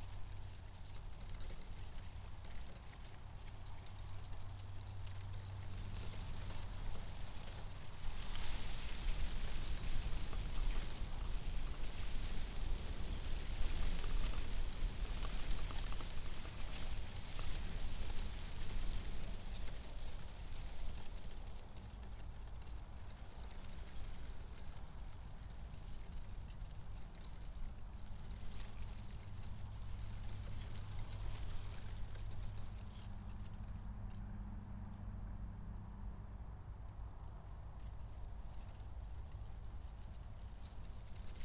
Sudeikių sen., Lithuania, dried oak
dried oak leaves in the wind